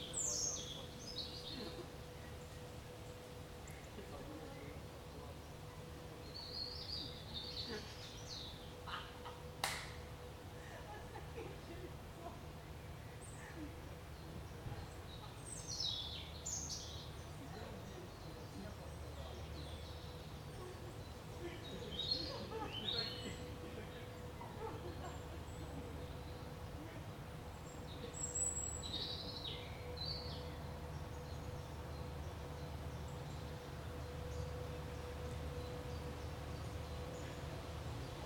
вулиця Нагірна, Вінниця, Вінницька область, Україна - Alley12,7sound13natureconversations
Ukraine / Vinnytsia / project Alley 12,7 / sound #13 / nature - conversations